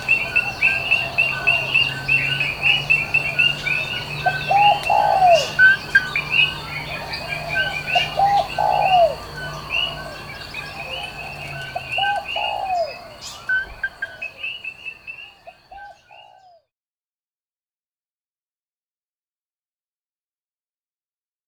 Morning Birds in Kailua-Kona, Hawaii - Cloud forest neighborhood morning birds
Morning birds and a little light traffic in a neighborhood in the cloud forest above the Kona coast.
Hawaii, United States, 7 June